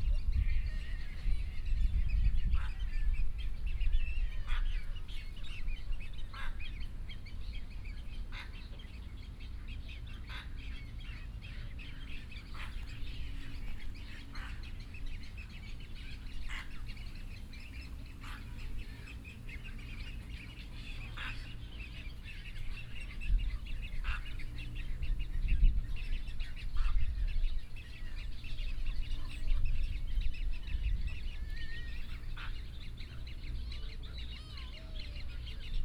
Luodong Sports Park, Yilan County - in the Park
Sitting on the lake, Birdsong, White egrets, Hot weather
Sony PCM D50+ Soundman OKM II